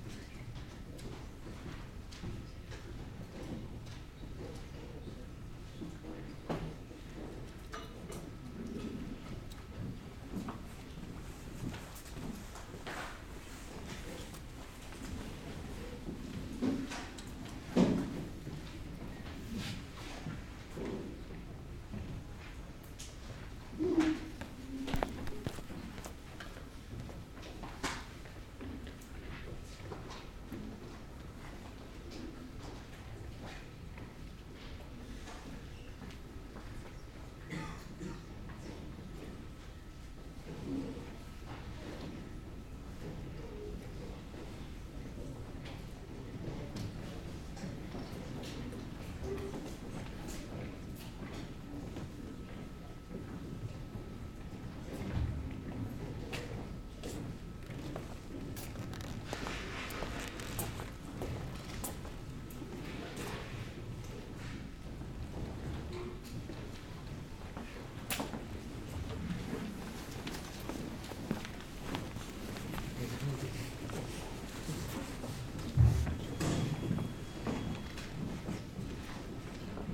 Place de la République, Strasbourg, Frankreich - bnu library
library, reading room, near central staircase, steps, announcement: "bibliotek is overcrowded".